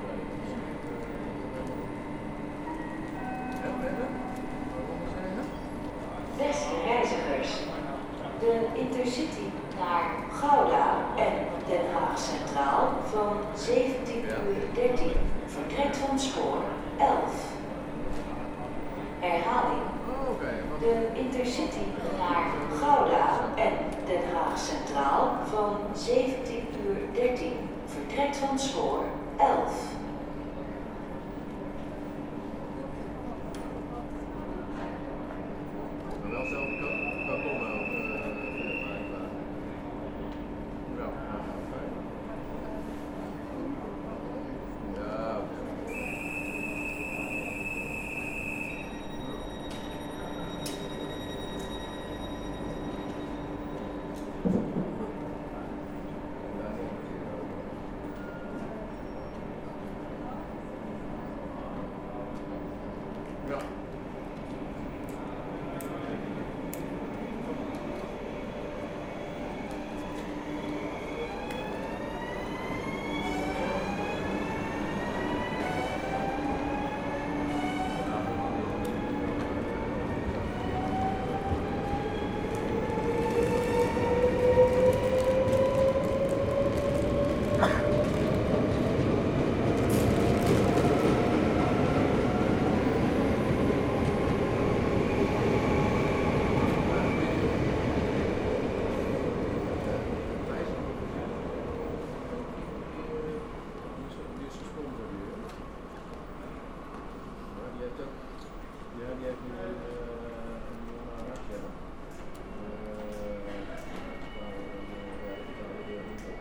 Platform, Utrecht, Niederlande - utrecht main station platform 2019
Walk to the platform from the station hall. The international train is delayed, several anouncements, other trains.
Recorded with DR-44WL.
Utrecht, Netherlands, 10 April 2019, ~17:00